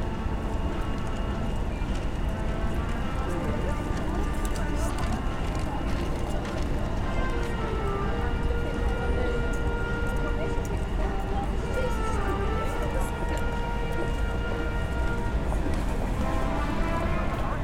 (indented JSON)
{
  "title": "Manchester City Centre - Manchester Buskers",
  "date": "2009-10-12 16:00:00",
  "description": "Perhaps the most annoying buskers in the world!",
  "latitude": "53.48",
  "longitude": "-2.24",
  "altitude": "52",
  "timezone": "Europe/London"
}